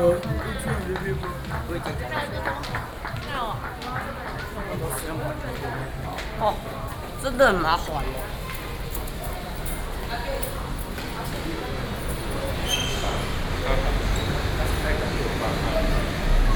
Xizhi Station, New Taipei City - At the train station